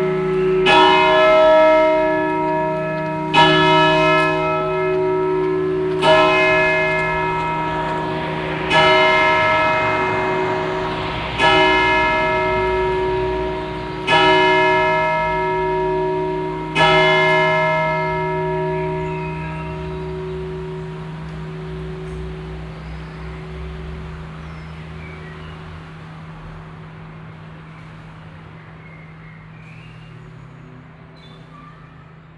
Schiltach, Deutschland - Schiltach, church, evening bells
At the evangelic church of the town in the evening. First the distant sound of the catholic church then the 20:00 evening bells of this church.
soundmap d - social ambiences and topographic feld recordings
Schiltach, Germany, 20 May, 20:00